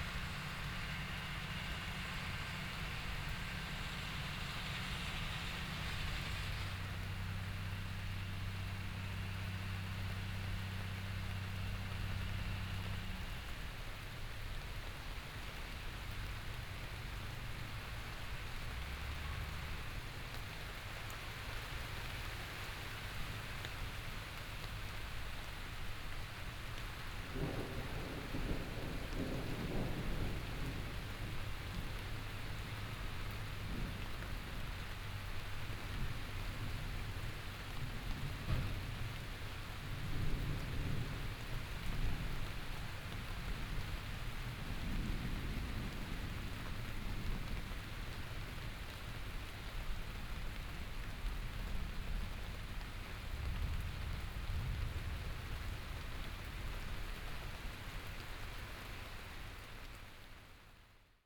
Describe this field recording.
Un orage qui arrive, un tracteur qui tourne... Zoom H4 / binaural (soundman).